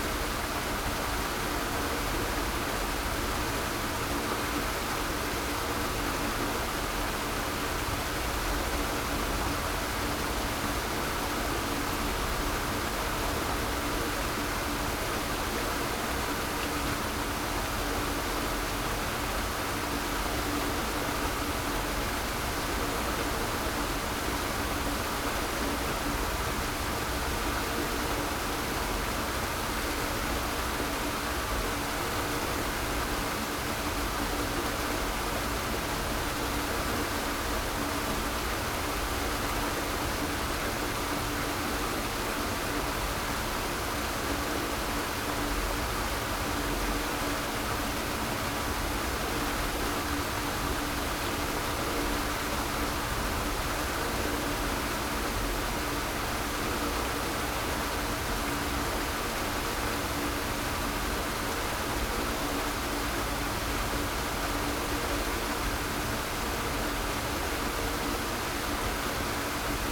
Berlin Buch, Deutschland - water level regulator
water level regulation between nearby Karpfenteich pond and Lietzengraben ditch, sound of water flowing through the pipe
(Sony PCM D50)